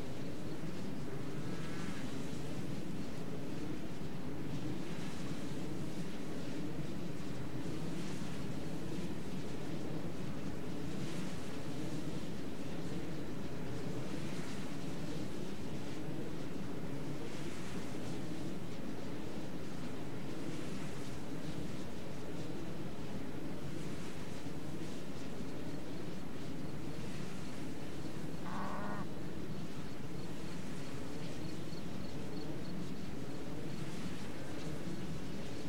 Est. Serra do Cume, Portugal - Windmills
The sound of the windmills, some cows uncomfortable with human presence and some crickets.
Terceira, Açores, Portugal, 25 August